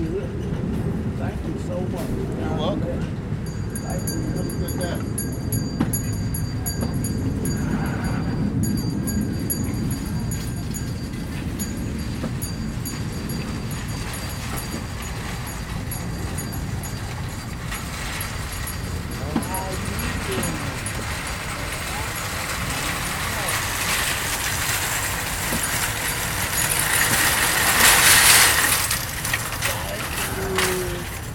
Clifton, Louisville, KY, USA - Ringing (Michael)
A man (Michael) ringing a bell and talking to people at the entrance of a supermarket amid shopping carts and cars.
Recorded on a Zoom H4n.